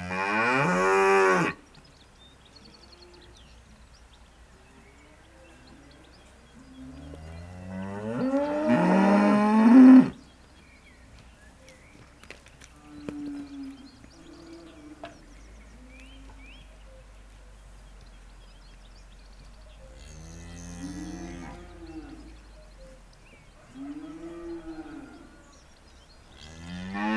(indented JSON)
{
  "title": "Les Bondons, France - Hungry cows",
  "date": "2016-04-29 07:30:00",
  "description": "Near a farm, the cows are hungry. They call the farmer loudly !",
  "latitude": "44.37",
  "longitude": "3.60",
  "altitude": "808",
  "timezone": "Europe/Paris"
}